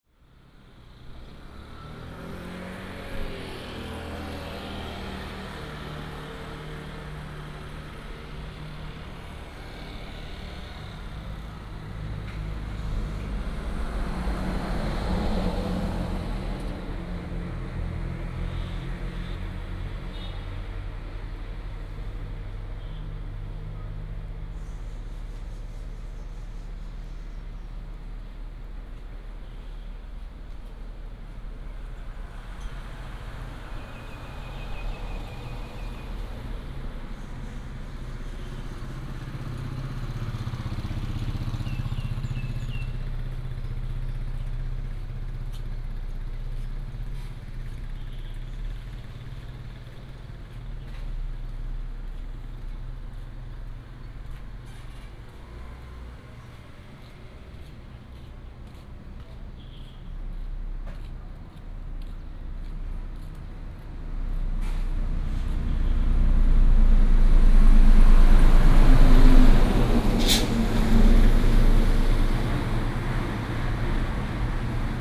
滿州門市, Manzhou Township - In front of the convenience store
In front of the convenience store, Bird sound, In the town center, Traffic sound
Manzhou Township, Pingtung County, Taiwan